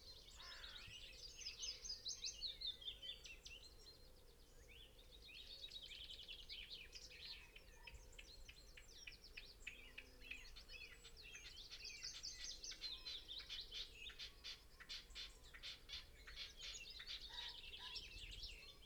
Green Ln, Malton, UK - blackbird song ... red-legged partridge calls ...
blackbird song ... red-legged partridge calls ... dpa 4060s to Zoom H5 clipped to twigs ... blackbird song for the first 12 mins ... red-legged partridge call / song after 15 mins ... bird call ... song ... from ... pheasant ... rook ... crow ... tawny owl ... wren ... willow warbler ... robin ... blackcap ... wood pigeon ...